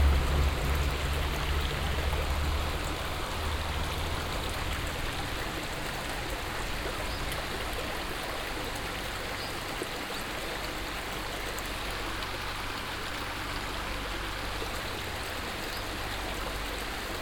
kautenbach, bridge, stream
At a street bridge at a stream that flows through the village. The sound of the floating low water, birds and some traffic passing the bridge.
Kautenbach, Brücke, Fluss
An einer Brücke beim Fluss, der durch das Dorf fließt. Das Geräusch von fließendem flachen Wasser, Vögel und etwas Verkehr auf der Brücke.
Kautenbach, pont, ruisseau
Sur un pont routier au-dessus d’un ruisseau qui coule à travers le village. Le son du courant, des oiseaux et le trafic qui traverse le pont.
Project - Klangraum Our - topographic field recordings, sound objects and social ambiences